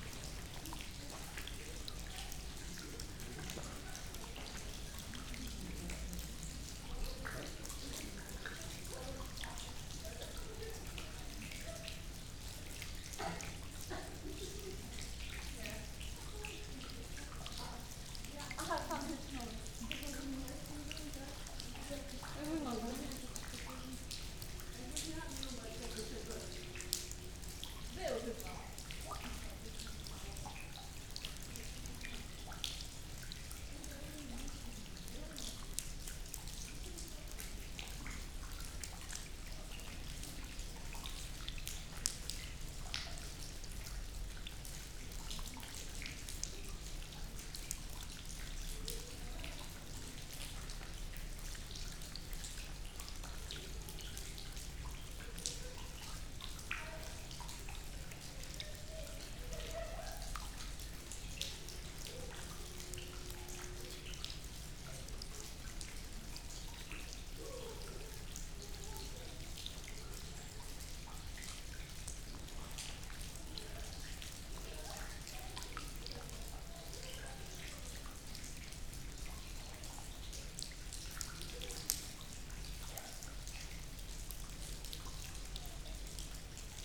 Gmina Kętrzyn, Poland, rain in Wolf's Lair
evening visit to Hitler's bunkers in Poland. mild rain, the leaking roof...not so many tourists...
Czerniki, Warmian-Masurian Voivodeship, Poland, 11 August 2014, ~20:00